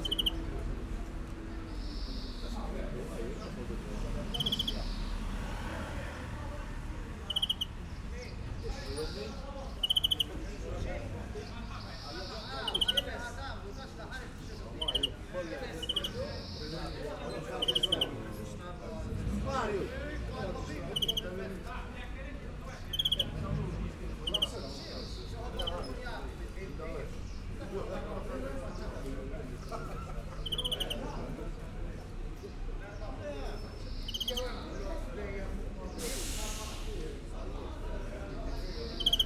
Ħal Tarxien, Malta - men with birds in cages
men of all ages like to carry around their birds, this one is a poor green finch in a tiny cage (SD702, DPA4060)
April 2017